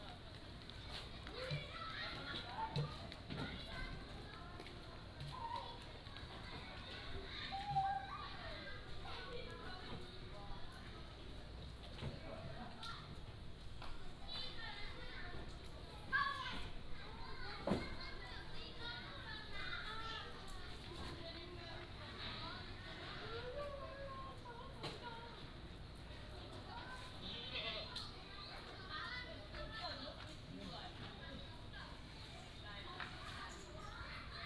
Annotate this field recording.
Small tribes, Traffic Sound, Yang calls